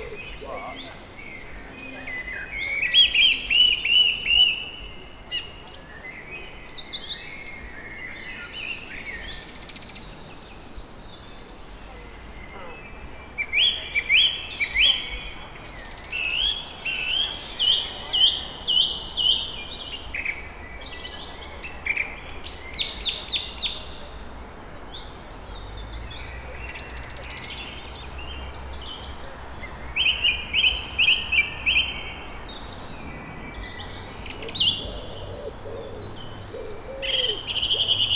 Dartington, Devon, UK - soundcamp2015dartington song thrush